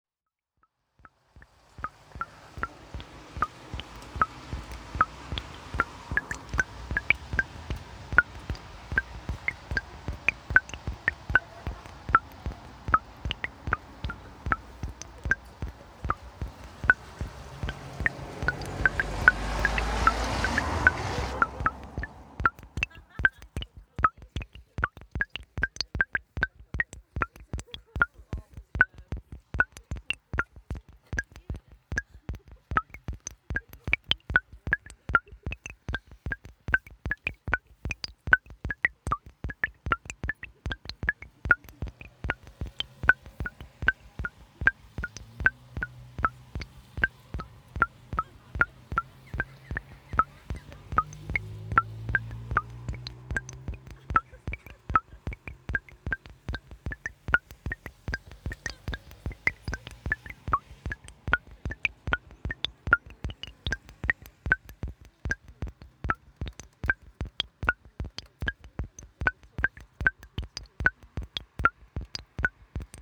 Wordsworth drinking fountain, Dove Cottage, Grasmere - Dripping Font
Recorded on a sound walk I led with 30 participants wearing wireless headphones. Part of an inspiration day for a new composition made by young composers and Manchester Camerata. We took this rhythmical recording back to Dove Cottage, put it into Ableton live, added some pitch effects and used it as a basis for an improvisation with the young musicians. It was the first day of work creating a new composition to celebrate the 250th anniversary of William Wordsworth's birth in April 2020.
(SD MixPre10t + Aquarian Audio hydrophone)